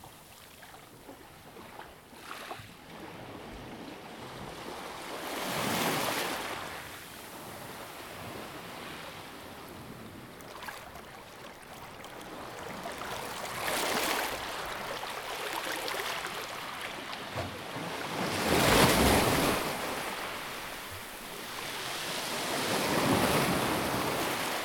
Lystis Beach, Viannos, Greece - Waves on pebbles on lystis
3 September, 10:34